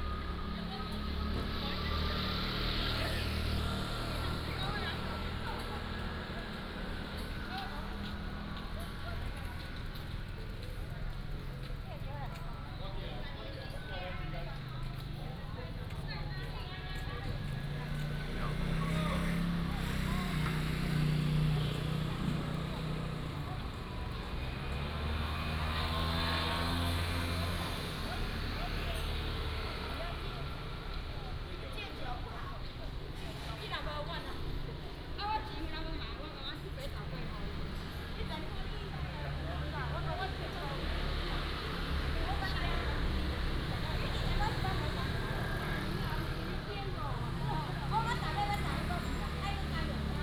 北鎮廟, Jincheng Township - In the square
In the square in front of the temple, Traffic Sound